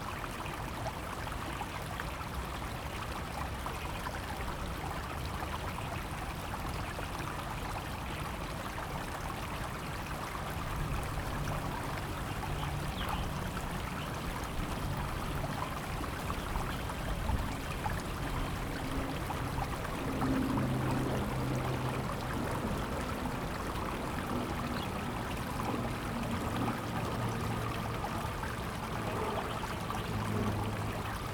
{"title": "老街溪, Zhongli Dist., Taoyuan City - stream", "date": "2017-02-07 16:05:00", "description": "stream, birds\nZoom H2n MS+XY", "latitude": "24.95", "longitude": "121.22", "altitude": "132", "timezone": "GMT+1"}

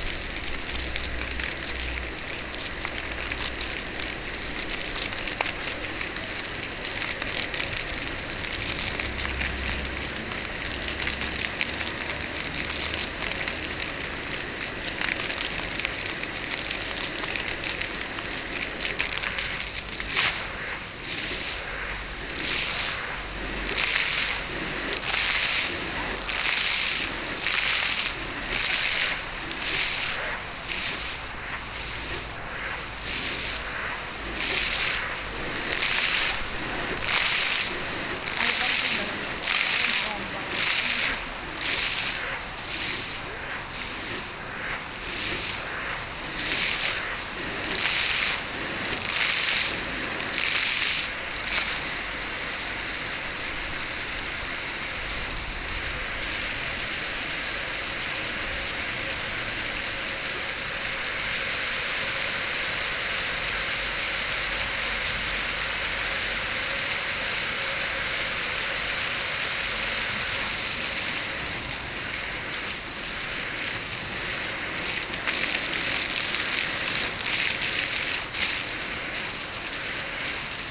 {"title": "Gyoer, Main Square - Water Music (schuettelgrat)", "date": "2011-06-19 12:20:00", "description": "Water Fountain at the main square in Györ, Hungary", "latitude": "47.69", "longitude": "17.63", "altitude": "119", "timezone": "Etc/GMT+1"}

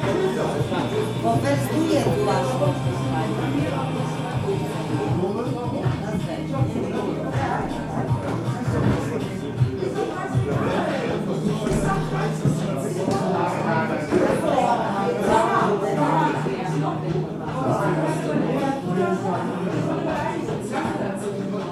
{"title": "zur ampel - zur ampel, hamm", "date": "2010-01-16 23:28:00", "description": "zur ampel, hamm", "latitude": "51.67", "longitude": "7.83", "altitude": "66", "timezone": "Europe/Berlin"}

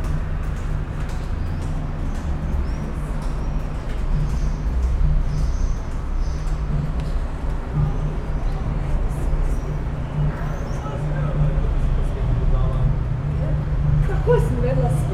{"title": "Maribor, Slovenia - passing through stefan rummel's installation", "date": "2012-06-19 19:35:00", "description": "a slow walk through stefan rummel's sound installation, 'within the range of transition', which is placed in a passageway between a quiet courtyard and maribor's main square. recorded quasi-binaurally.", "latitude": "46.56", "longitude": "15.65", "altitude": "269", "timezone": "Europe/Ljubljana"}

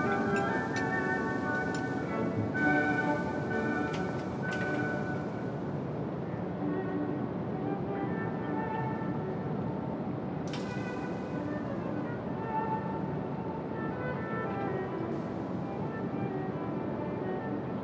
{"title": "Urspelt, Chateau d Urspelt - Urspelt, chateau, inner courtyard", "date": "2012-08-06 21:10:00", "description": "An einem windigen Sommerabend im Innenhof des renovierten Schlosses von Urspelt, das in ein vier Sterne Hotel und Restaurant umgebaut wurde.\nDie Klänge der windigen Abendstimmung mit klassischer Musik aus Lautsprechern im Garten des Innenhofs. Im Hintergrund ein paar Gäste an Tischen und Schritte im Kies.\nOn a windy summer evening inside the inner couryard of the renovated old manison, that nowadays is a four star hotel and restaurant. The sounds of the windy evening atmosphere with classical music coming fromspeakers inside the garden. In the background some guests at tables and steps on gravel ground.", "latitude": "50.08", "longitude": "6.05", "altitude": "477", "timezone": "Europe/Luxembourg"}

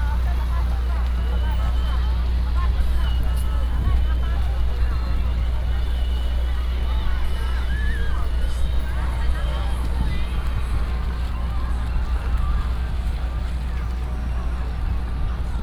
{"title": "Zhuwei Fish Harbor, Dayuan District, Taoyuan City - Walking in the market", "date": "2016-11-20 15:04:00", "description": "Walking in the market, Many tourists, wind", "latitude": "25.12", "longitude": "121.24", "altitude": "7", "timezone": "Asia/Taipei"}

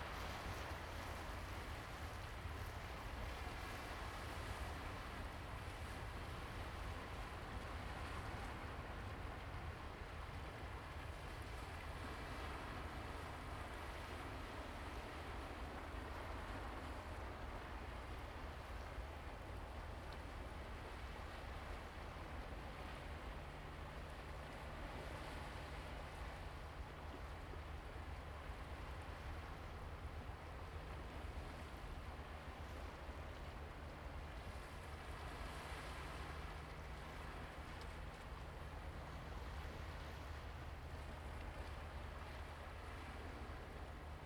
{"title": "菓葉觀日樓, Huxi Township - the waves", "date": "2014-10-21 12:32:00", "description": "Sound of the waves\nZoom H2n MS+XY", "latitude": "23.58", "longitude": "119.68", "altitude": "8", "timezone": "Asia/Taipei"}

